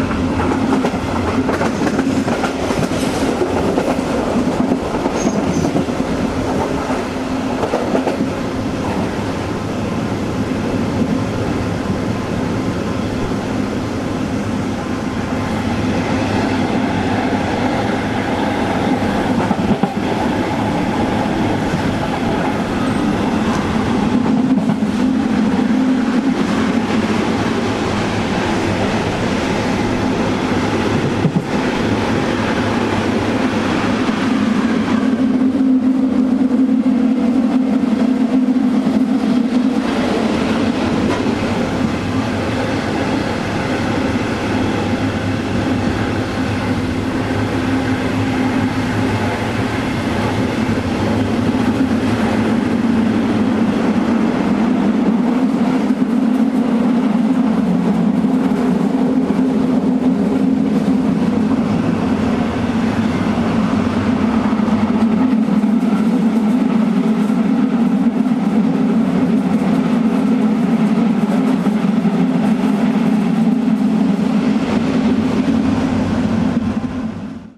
Fortezza/Franzenfeste, waiting train
Night train Munich-Rome waiting to get back to ride down the southside of the Alps.
Franzensfeste Province of Bolzano-Bozen, Italy, 2 April 2011